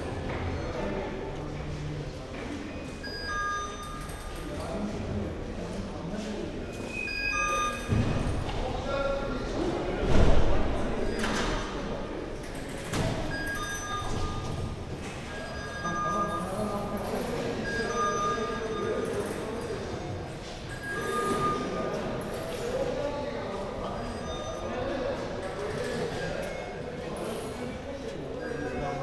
Tunel underground rail, Istanbul
a small one car train runs up and down the main hill in Beyoglu